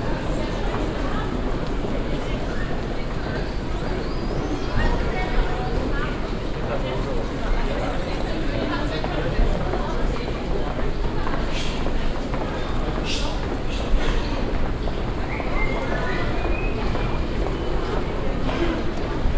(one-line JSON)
{"title": "Düsseldorf, HBF, Haupteingangshalle - düsseldorf, hbf, haupteingangshalle", "date": "2009-01-24 16:19:00", "description": "At the main entrance of the main station.\nsoundmap nrw: social ambiences/ listen to the people - in & outdoor nearfield recordings", "latitude": "51.22", "longitude": "6.79", "altitude": "44", "timezone": "Europe/Berlin"}